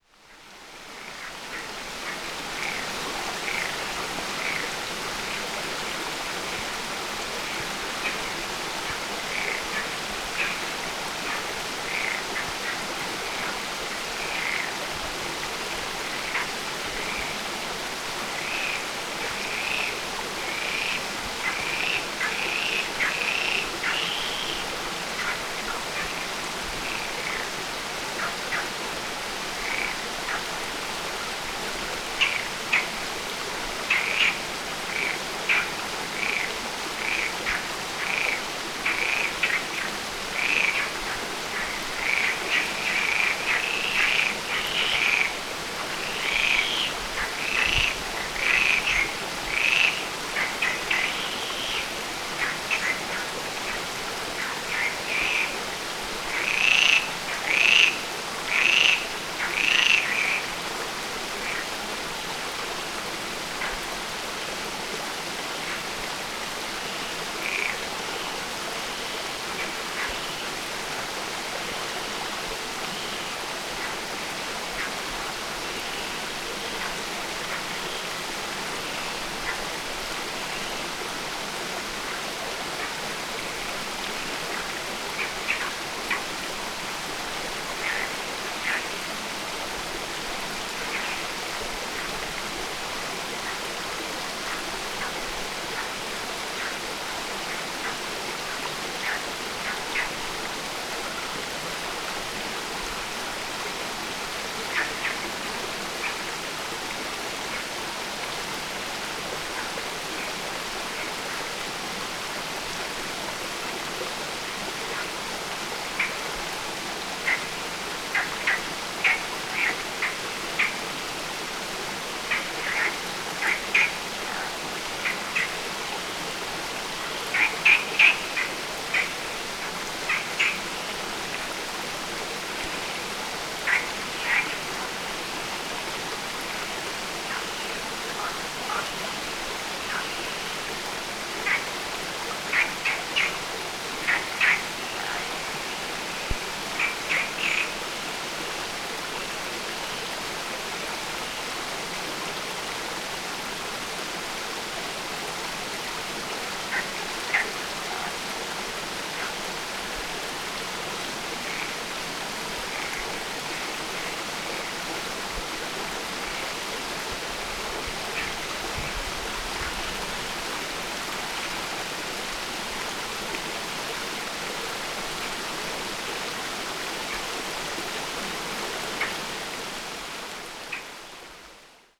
levada leading north from Funchal - frogs 2
another take on the omnipresent, croaking frogs during the levada walk towards Monte. stream flow going in a very intricate way.